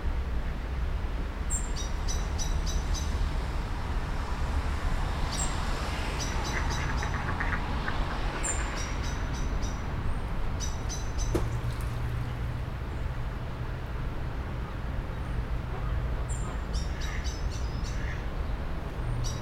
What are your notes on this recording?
Location: Wellness city of Bad Berka, Thuringia State, Germany. *Binaural sound is intended for playback on headphones so please use one for spatial immersion.